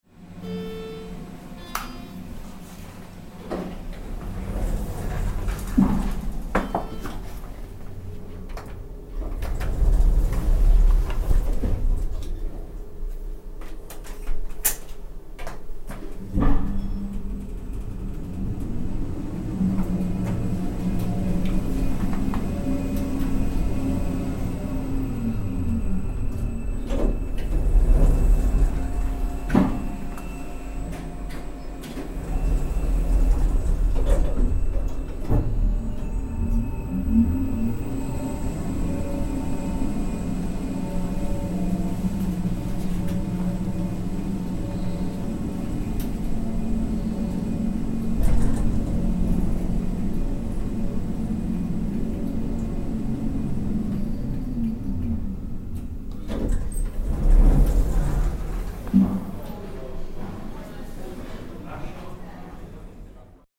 recorded june 16, 2008. - project: "hasenbrot - a private sound diary"